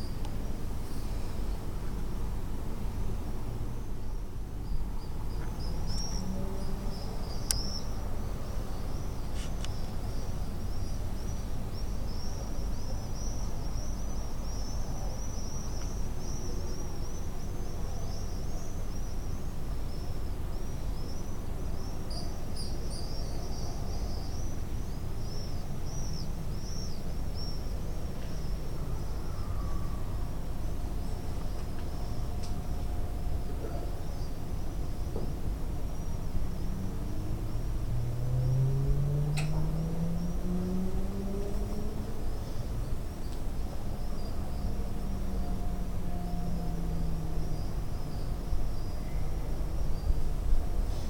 the swifts at my window. they are just leaving. + my clock. (Marantz PMD 661 Int Mic)
Bordeaux, France, 16 July 2014